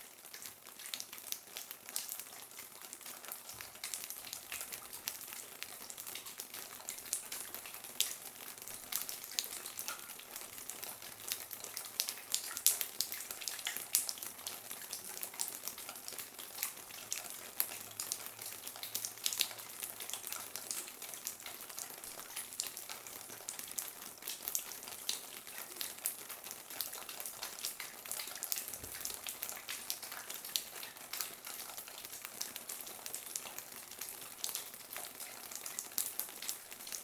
Into an underground cement mine, water is falling on rocks. It makes calcite concretions.